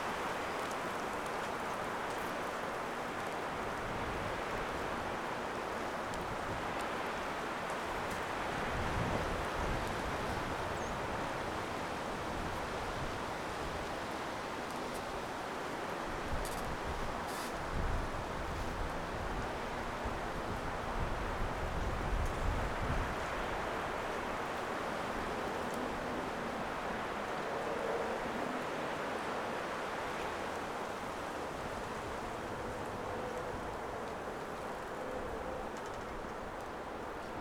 Merrick Park, Bournemouth, UK - Merrick Park ambient wind in trees
20 September 2012, 10:59